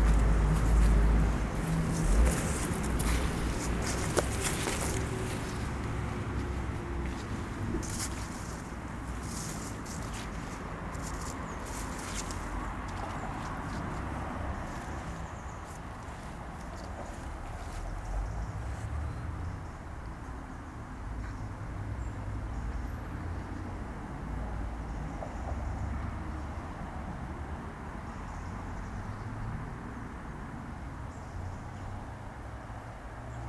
Asola MN, Italy - walking on dead leaves
public park, walking on path, on dead leaves, close to Chiese river
Mantova, Italy, 24 October 2012